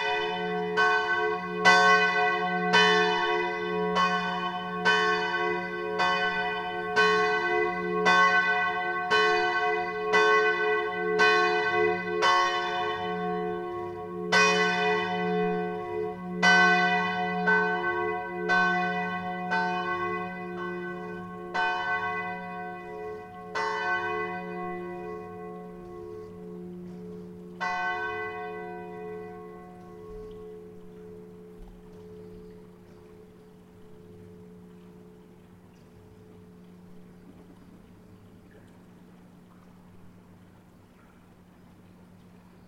Poschiavo, Schweiz - Morgen einläuten
Poschiavo erwacht mit Glockenklang